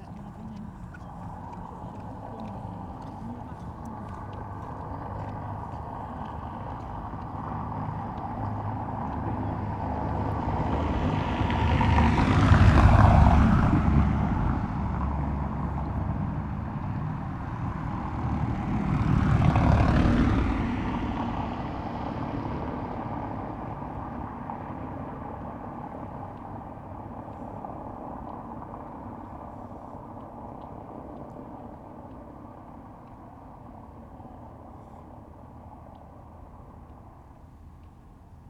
Marine Dr, Scarborough, UK - vehicles on a cobbled road ...
vehicles on a cobbled road ... traffic on Marine Drive Scarborough ... open lavalier mics clipped to a sandwich box ... bird calls from herring gulls ... after a ten minutes a peregrine falcon parked in the cliffs above the road and was distantly vocal for some time ... occasional voices and joggers passing by ...
6 December 2017, 10:00